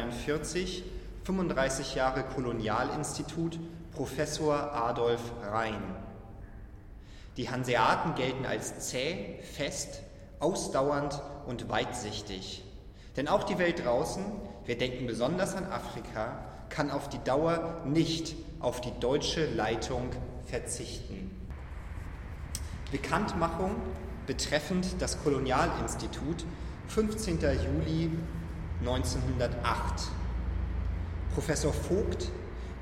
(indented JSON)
{
  "title": "Echos unter der Weltkuppel 05 Vestibül Südfront",
  "date": "2009-11-01 14:16:00",
  "latitude": "53.56",
  "longitude": "9.99",
  "altitude": "15",
  "timezone": "Europe/Berlin"
}